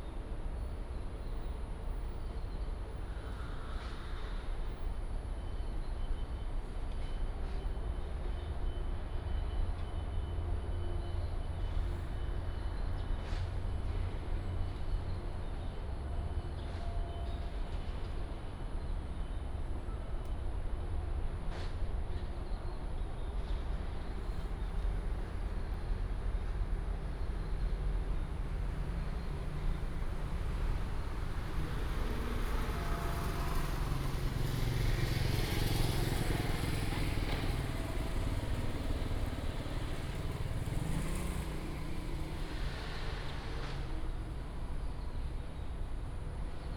Ln., Sec., Guangfu Rd., East Dist., Hsinchu City - Old community
Old community, traffic sound, Construction sound, birds sound, Binaural recordings, Sony PCM D100+ Soundman OKM II
12 September 2017, ~10:00